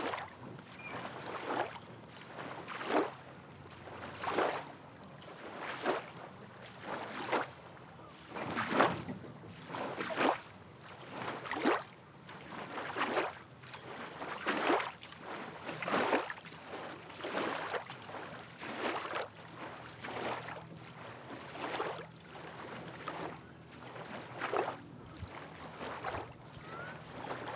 Matoska Park - Waves hitting the boat ramp
The microphone was place a few feet from the water line as waves lapped against the boat ramp.